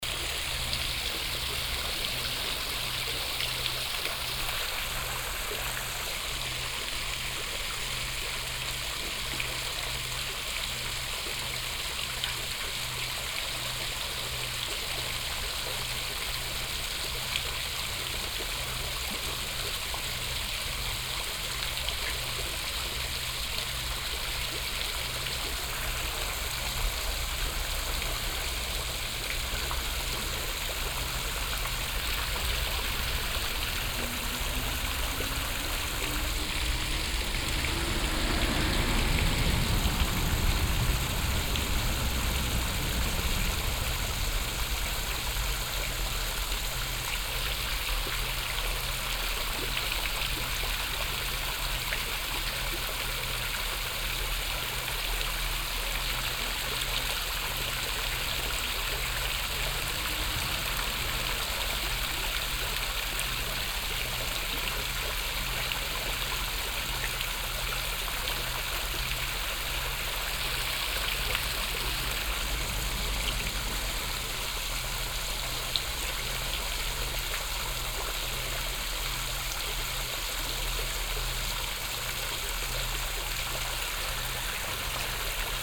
rudolstadt, anger, fountain
At the square in front of the historical Schiller theatre.
The sound of a fountain. In the background traffic and a train passing by.
soundmap d - topographic field recordings and social ambiences
Rudolstadt, Germany, October 2011